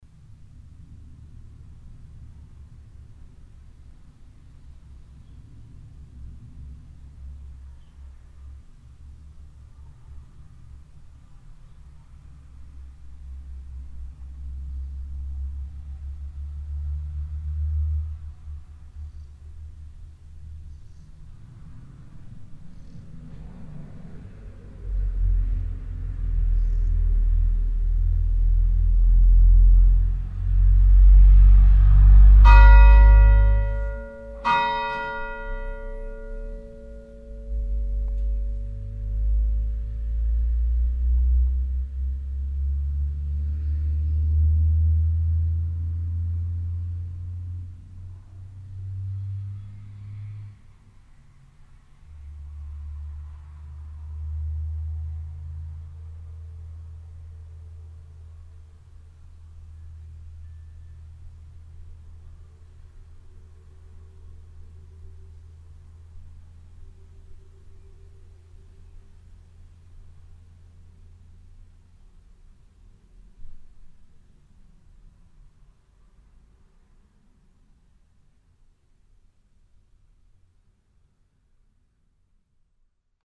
At the church of the small village. The sound of a passing tractor and the 2 o clock bells on a windy summer afternoon.
Consthum, Kirche, Glocken
Bei der Kirche des kleinen Dorfes. Das Geräusch eines vorbeifahrenden Traktors und das 2-Uhr-Glockengeläut an einem windigen Sommernachmittag.
Consthum, église, cloches
Près de l’église du petit village. Le bruit d’un tracteur qui passe et le carillon de 14h00, un après-midi d’été venteux.
Project - Klangraum Our - topographic field recordings, sound objects and social ambiences
9 August 2011, 12:37